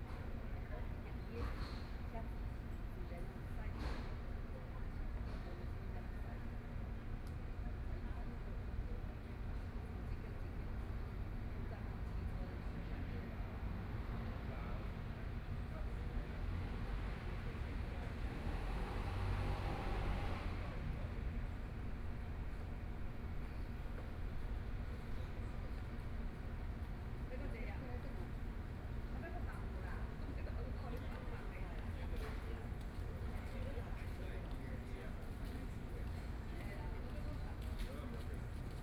Night park, Dogs barking, Women chatting voices, Traffic Sound
Please turn up the volume a little. Binaural recordings, Sony PCM D100+ Soundman OKM II
15 April 2014, 21:38